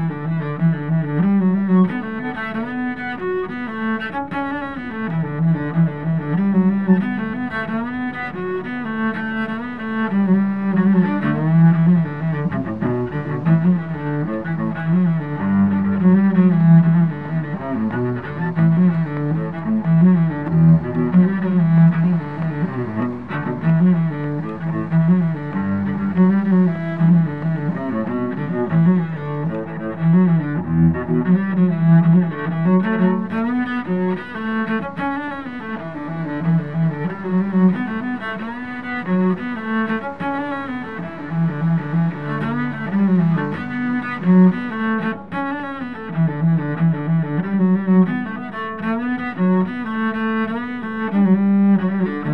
Place du Capitole, Toulouse, France - Cellist Play
Cellist Play Wirth background Sound
Worker Cleaning Facade Building, car trafic
captation : zoom h4n
France métropolitaine, France, 27 April 2021, 09:30